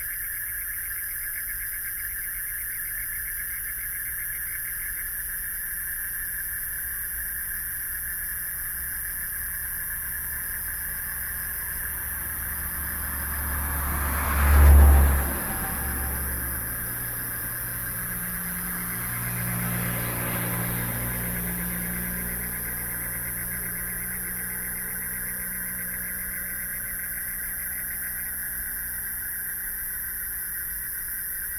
Frogs sound, Traffic Sound, Environmental Noise
Binaural recordings
Sony PCM D100+ Soundman OKM II + Zoom H6 MS
Taipei City, Beitou District, 關渡防潮堤, March 2014